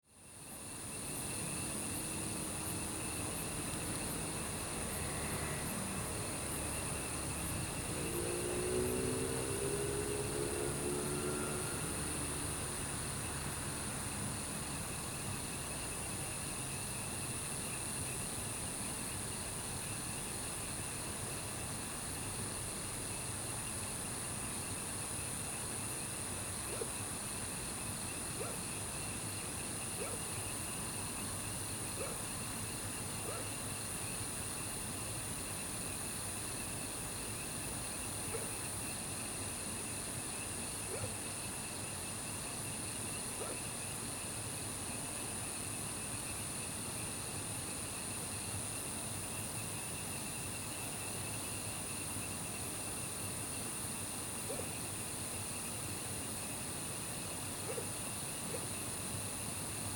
桃米里, 埔里鎮 Puli Township - Night hamlet
Night hamlet, Insects sounds, Dogs barking, Frogs chirping, The sound of water streams
Zoom H2n MS+XY